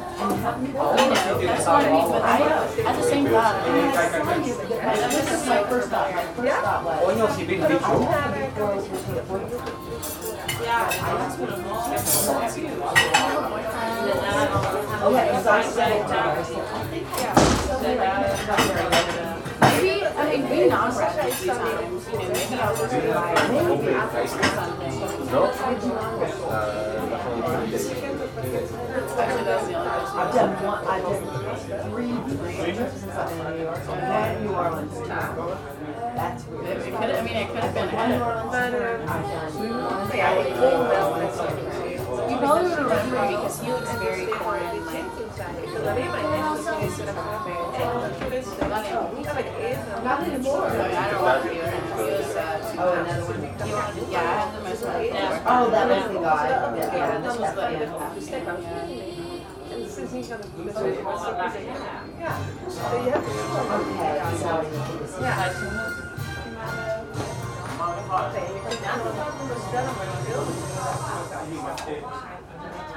Zeedijk, Amsterdam, The Netherlands - Thai
Thai restaurant Bird, Zeedijk. Recorded with a Sony D-50.